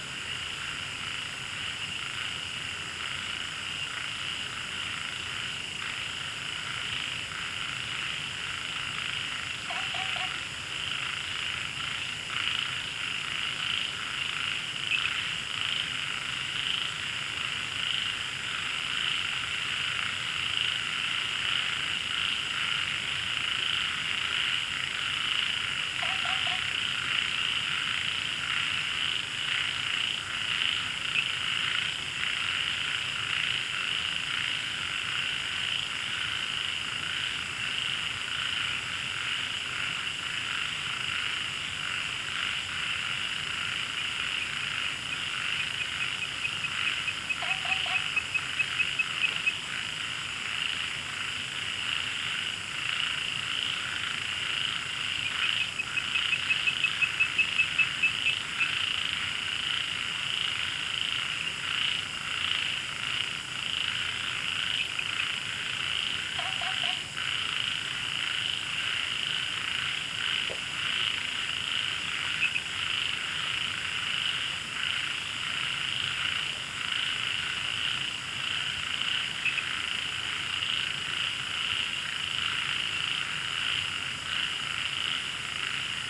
MaoPuKeng Wetland Park, Puli Township - Frog chirping
Frog chirping, Many frogs
Zoom H2n MS+XY